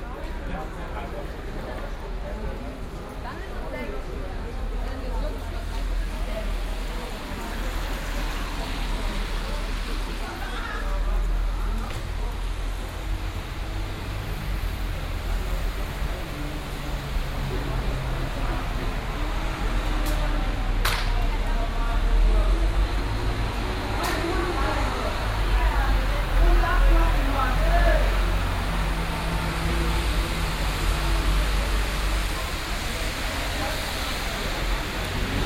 Koblenz, main station, Deutschland - Koblenz Hbf
Arguments at the bus station in front of the main station Koblenz. Binaural recording.